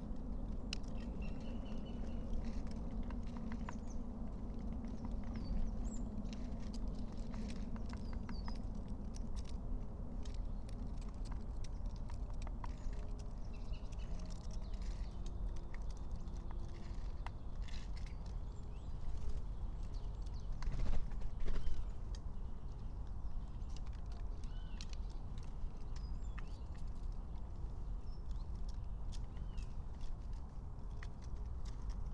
08:15 Berlin, Königsheide, Teich - pond ambience